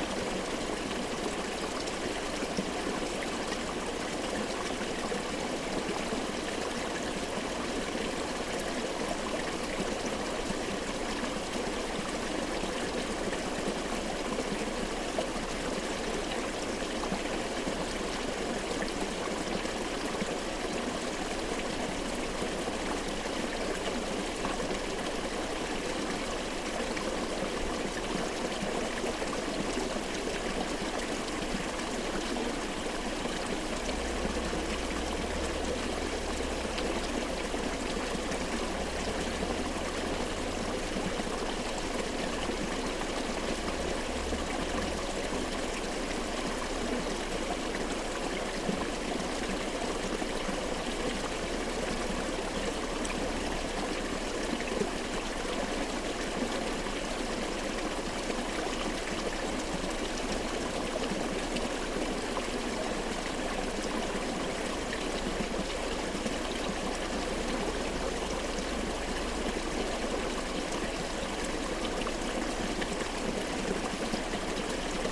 The burn beside the old water mill, part of the Croft House Museum, Dunrossness, Shetland Islands, U - The burn by the old watermill
This is the sound of the small burn that runs past the restored Watermill near the Croft House Museum. In Shetland many people at one time had access to a small watermill, where they could grind down grains using the power of the water in the vicinity. Water was diverted into the mill via a series of stone waterways, and diverted away again when not in use, in order to preserve the paddles inside. There is an old Shetland superstition which involved throwing a ball of yarn into an old watermill on Halloween in order to hear the voice of one's future husband - [taken from the Tobar an Dualchais site: On Halloween a girl would take a ball of wirsit [worsted yarn] to an old watermill and throw it down the lum [chimney]. She would wind the ball back up and as it reached the end she would ask, "Wha haad's my clew [ball of wool] end?" Then she would hear the voice of her future husband speaking.]
Shetland Islands, UK, 1 August 2013, 13:17